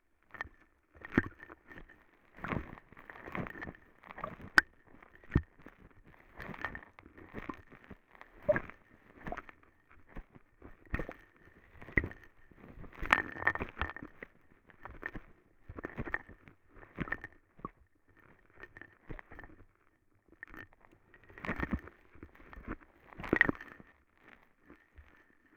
Střelecký ostrov, Prague - Vltava river bank
Vltava (Moldau) river, gentle waves and sand, and abusing contact microphones as hydrophones. recorded during the Sounds of Europe radio spaces workshop.
Prague, Czech Republic, 4 October 2012, ~13:00